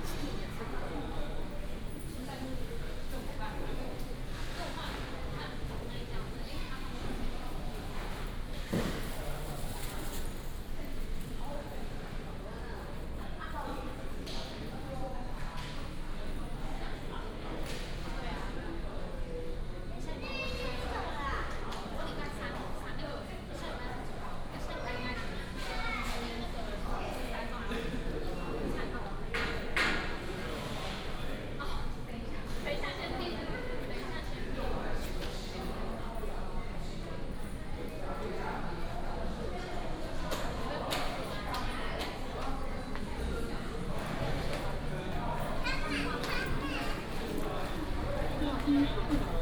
Taoyuan City Government, Taiwan - Walking in the city hall
Walking in the city hall, Take the elevator